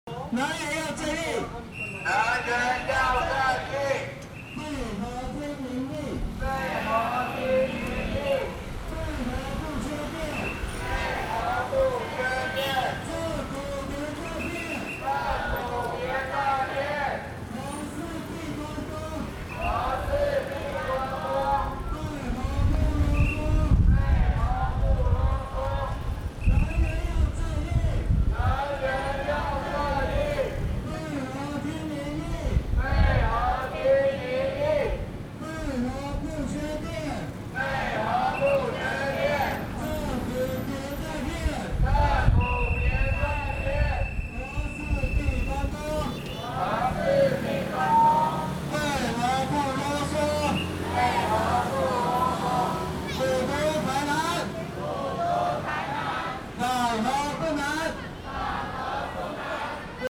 National Museum of Taiwan Literature 台灣文學館 - Taiwan's anti-nuclear power movement 反核遊行
People are fighting for the anti-nuclear power.